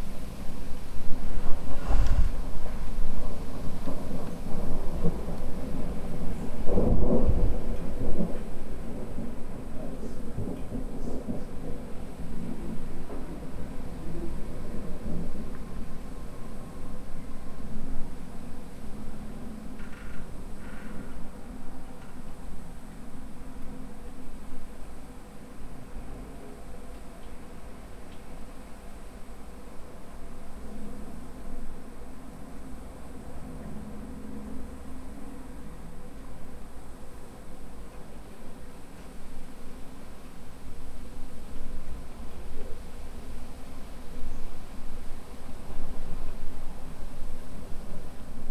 Brady Ave, Bozeman, Montana - Thunderstorm gearing up in Bozeman.
From a bedroom windowsill, a thunderstorm rolls across Bozeman.